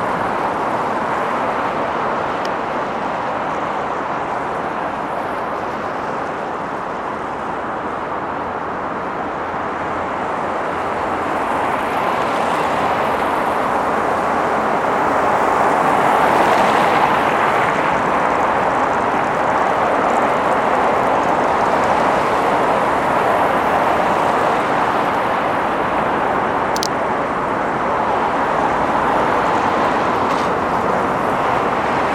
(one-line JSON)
{"title": "Ленинский пр-т., Москва, Россия - Near Leninsky Prospekt", "date": "2020-01-27 21:15:00", "description": "Near Leninsky Prospekt. I recorded what was happening around me. Mostly you can hear the sound of passing cars. The evening of January 27, 2020. The sound was recorded on a voice recorder.", "latitude": "55.71", "longitude": "37.58", "altitude": "155", "timezone": "Europe/Moscow"}